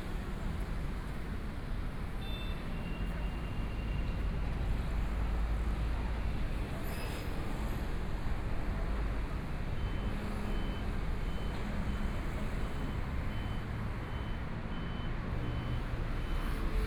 Songjiang Rd., Zhongshan Dist. - walking on the Road
Walking in the small streets, Traffic Sound, Various shops voices, Binaural recordings, Zoom H4n+ Soundman OKM II
Zhongshan District, Taipei City, Taiwan, 20 January 2014, 16:08